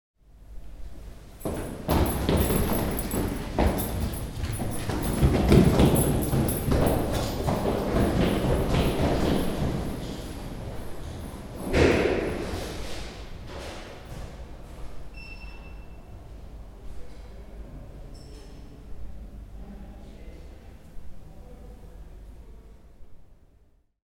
Maribor, Slovenia, Slomškov trg - Two persons staircase running
A short run down the staircase in the hall.